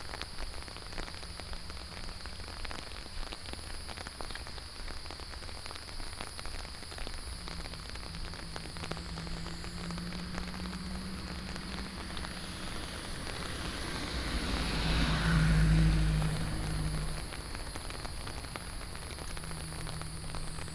Zhonggua Rd., 桃米里 Puli Township - Walking in the rain
Walking in the rain, The sound of water streams, Traffic Sound, Cicadas cry